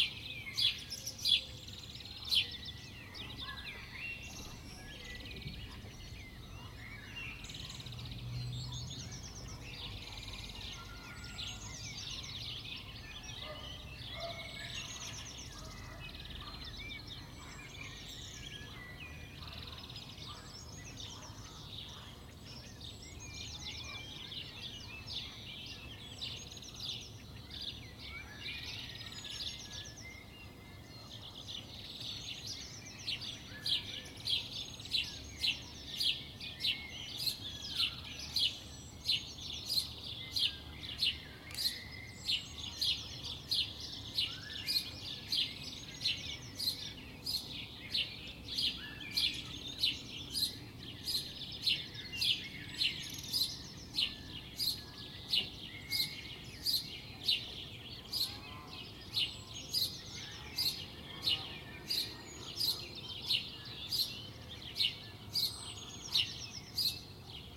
Hálkova, Česká Lípa - Ptáci / Birds
Česká Lípa city, housing estate Slovanka, morning birds singing from window of my home. Tascam DR-05x with build-in microphones, cutting in Audacity.
Severovýchod, Česká republika